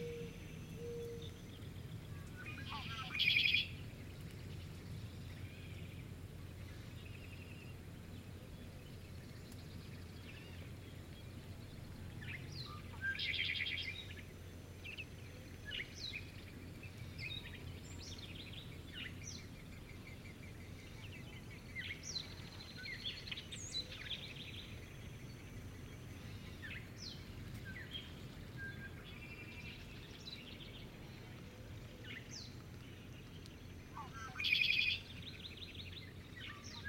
Wharton State Forest, NJ, USA - Penn Swamp Dawn
Two brief, joined recordings of a single dawnchorus at Penn Swamp located deep off the beaten track in Wharton State Forest. (Fostex FR2-LE; AT3032)